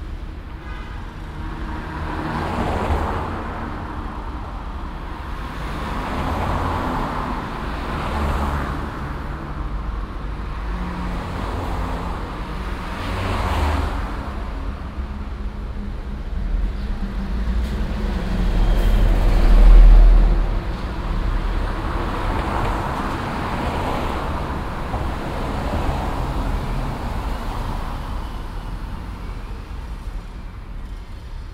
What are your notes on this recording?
soundmap: köln/ nrw, rudolfplatz an strassenbahnhaltestelle unter hahntorburg, an und abschwellender verkehr auf steinpflasterstrasse, morgens, project: social ambiences/ listen to the people - in & outdoor nearfield recordings